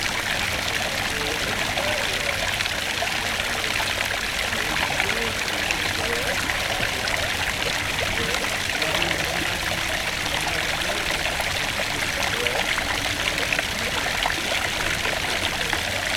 Pl. des Éléphants, Chambéry, France - Fontaine des éléphants
La mondialement célèbre fontaine des éléphants de Chambéry en l'honneur du conte De Boigne .